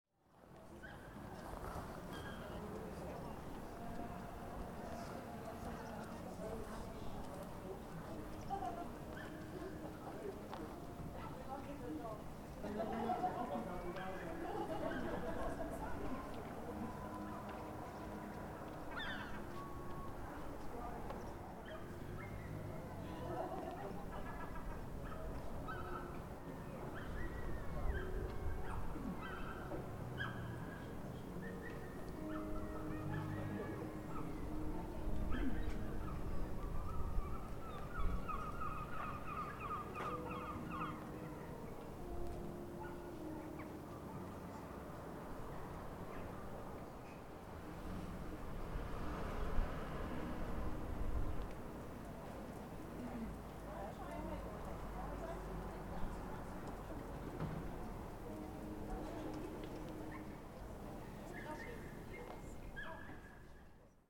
N Quay, Padstow, UK - Padstow Harbour
A bit breezy but a quiet Sunday afternoon off season.
England, United Kingdom, 7 March 2022, 15:00